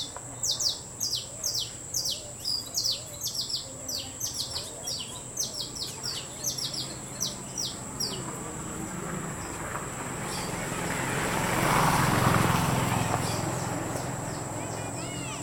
Una tienda a la orilla del camino. Los niños juegan y las motos pasan.